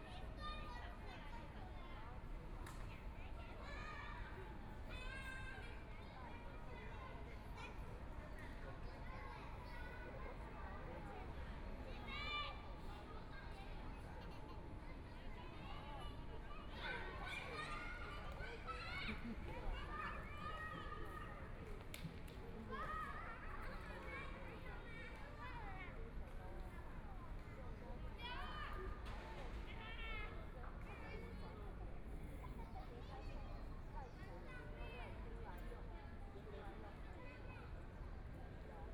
ZhuChang Park, Taipei City - in the Park
Chat between elderly, Traffic Sound, Kids game noise, Birds sound
Please turn up the volume
Binaural recordings, Zoom H4n+ Soundman OKM II